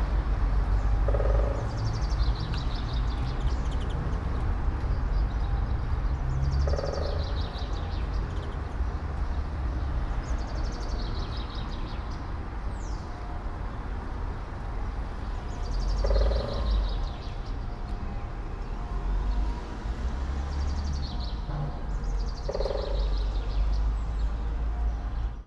{"title": "all the mornings of the ... - mar 13 2013 wed", "date": "2013-03-13 08:42:00", "latitude": "46.56", "longitude": "15.65", "altitude": "285", "timezone": "Europe/Ljubljana"}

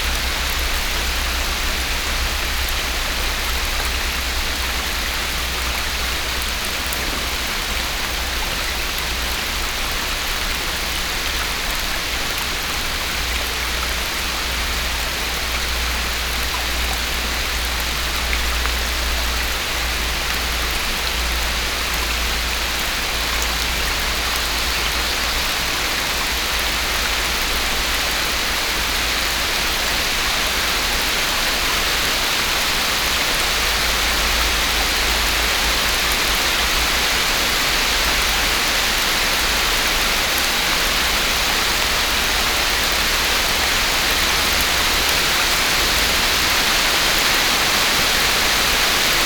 {"title": "Steinbachtal, rain, under high trees, WLD", "date": "2011-07-18 11:45:00", "description": "Steinbachtal, standing beside the brook under high trees, strong rain, WLD", "latitude": "51.39", "longitude": "9.63", "altitude": "233", "timezone": "Europe/Berlin"}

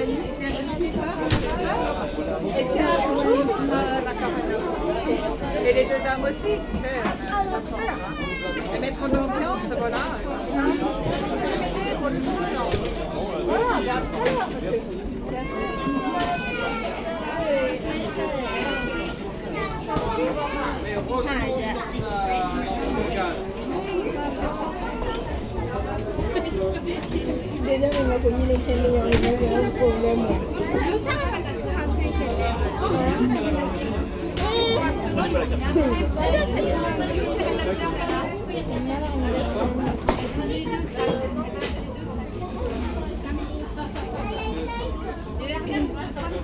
{"title": "Rambouillet, France - Skating rink", "date": "2019-01-01 17:30:00", "description": "the waiting room of a small skating rink. It's completely crowded.", "latitude": "48.64", "longitude": "1.83", "altitude": "151", "timezone": "GMT+1"}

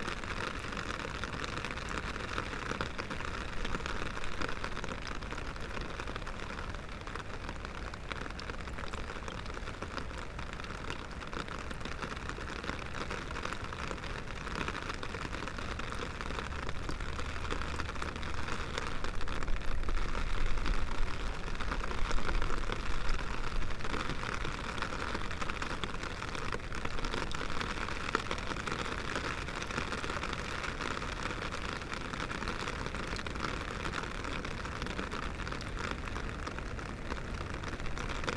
{"title": "river Drava, Loka - rain, umbrella, drops", "date": "2015-02-22 13:22:00", "description": "changed river morphology; strong flow of water has closed the way to the gravel bars", "latitude": "46.48", "longitude": "15.76", "altitude": "233", "timezone": "Europe/Ljubljana"}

